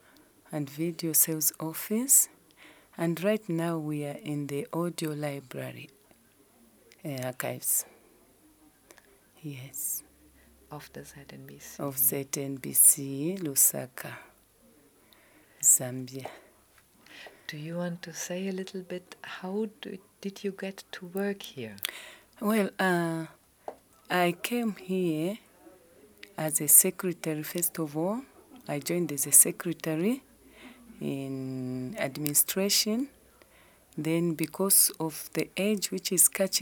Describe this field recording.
These recordings picture a visit to the audio archives of the Zambia National Broadcasting Corporation ZNBC. Mrs. Namunkolo Lungu from the audio and visual sales office introduces the ZNBC project of documenting the annual traditional ceremonies in all the provinces of the country, which has been running over 15 years. She talks about her work between the archive, the broadcasters, outreach and sales, describes some of the ceremonies, and adds from her personal cultural practices and experiences. The entire playlist of recordings from ZNBC audio archives can be found at: